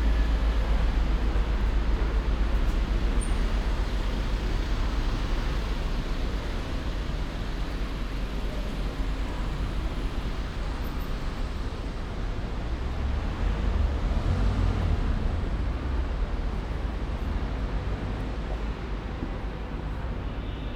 Trieste 1st of the year 2022: soundwalk
Saturday January 1st, 2022, walking in the centre town, on the pier, in and around Piazza Unità d'Italia.
Start at 2:15 p.m. end at 3:27 p.m. duration of recording 1h'12’21”
The entire path is associated with a synchronized GPS track recorded in the (kmz, kml, gpx) files downloadable here: